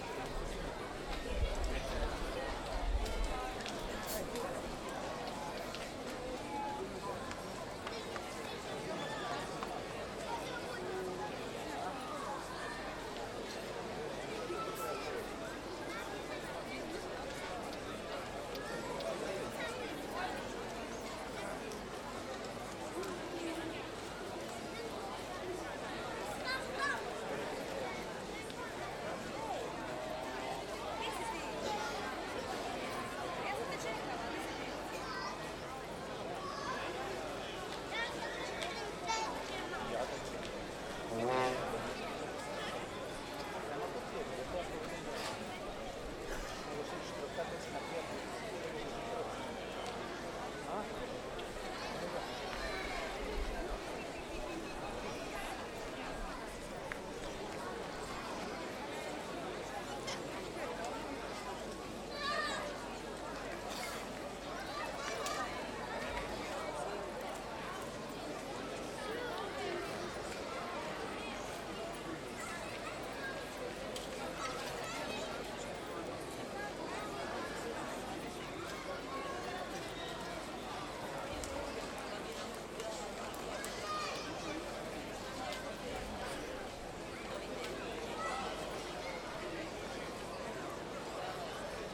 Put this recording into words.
people on main town square are listening and youngster are playing around...